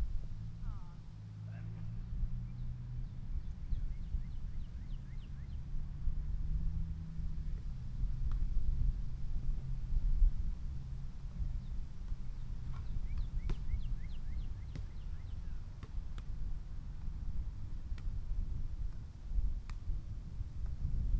College Green Park, Iowa City
wind, volleyball, basketball, park, talking